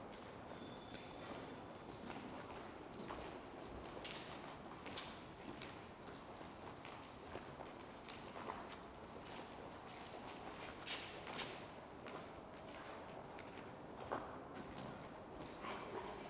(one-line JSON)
{"title": "cathedrale de cadiz, en el bajo", "date": "2010-07-18 15:20:00", "description": "cathedrale de cadiz, bajo, Kathedrale, Grabkammern, andalucia, schritte, flüstern", "latitude": "36.53", "longitude": "-6.30", "altitude": "22", "timezone": "Europe/Madrid"}